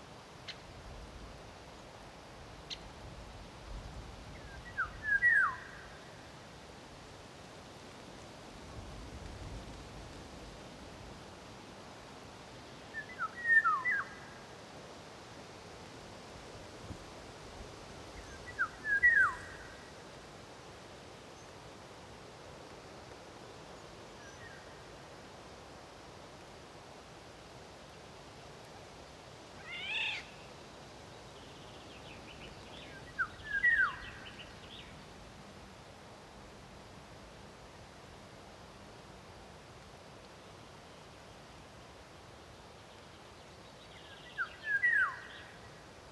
Fürstenberger Wald- und Seengebiet, Germany - Golden Oriole's song
Two, maybe three, Golden Orioles singing at each other from different positions in the landscape. The nearest one screeches once. Birch and beach leaves hiss in the breeze, a chaffinch sings in the distance and a woodpecker calls occasionally.
15 May, 18:06